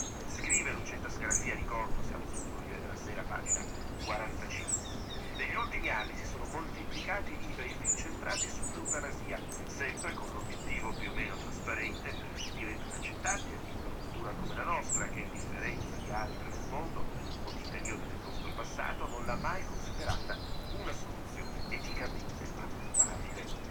stromboli, ginostra - lonely radio
suddenly weve heard a lonely radio playing in the bush, no one around.